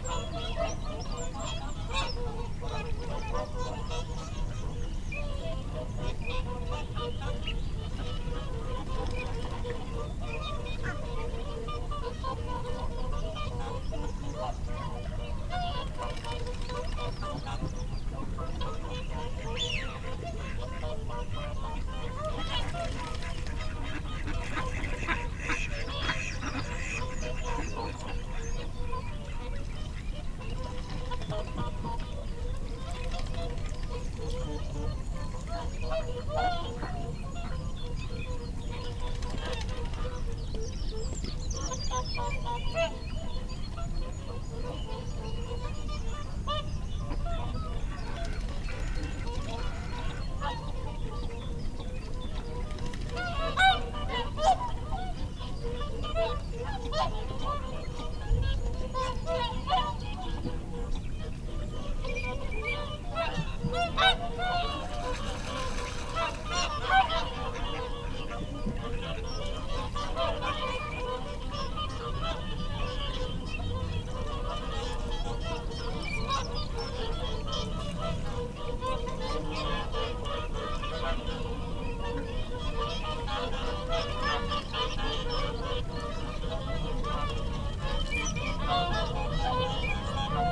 whooper swan soundscape ... dpa 4060s clipped to a bag to zoom f6 ... folly pond ... bird calls from ... teal ... shoveler ... mallard ... oystercatcher ... mute swan ... barnacle geese ... wigeon ... lapwing ... redwing ... dunlin ... curlew ... jackdaw ... wren ... dunnock ... lapwing ... some background noise ... love the occasional whistle from wings as birds fly in ... possibly teal ... bits of reverb from the whoopers call are fascinating ... time edited unattended extended recording ...